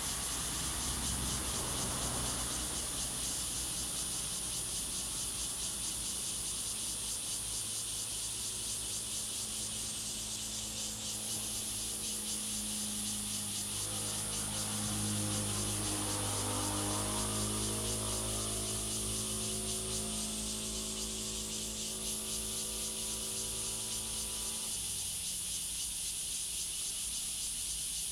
Wenquan Rd., Jhiben - Cicadas
Cicadas, Traffic Sound, The weather is very hot
Zoom H2n MS +XY